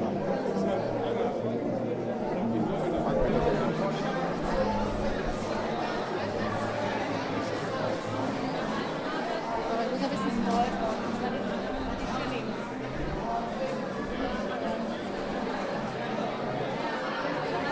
Atmo at the opening of the exhibition "Abstract paintings" of the German painter Gerhard Richter at the Museum Ludwig, Colgone.